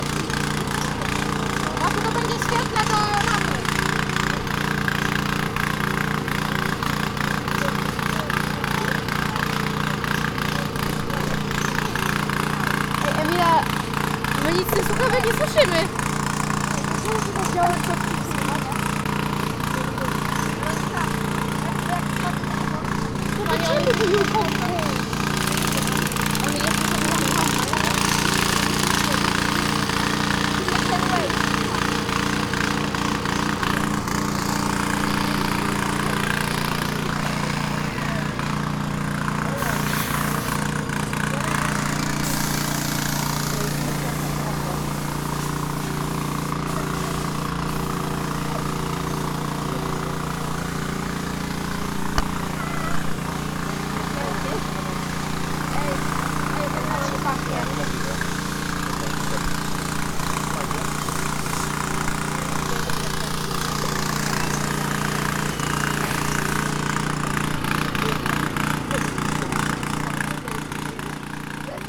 Roboty drogowe przed szkołą nr. 25
Gdańsk, Poland, 5 May 2015, 10:30